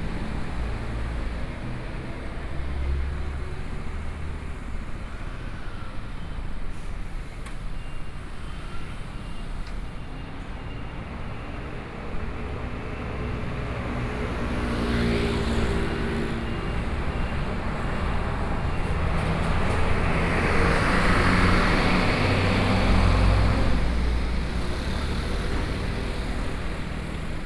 Zhongzheng Rd., Changhua - walk in the Street
Traffic Noise, Passing homes and shops, Binaural recordings, Zoom H4n+ Soundman OKM II
Changhua City, Changhua County, Taiwan, 8 October 2013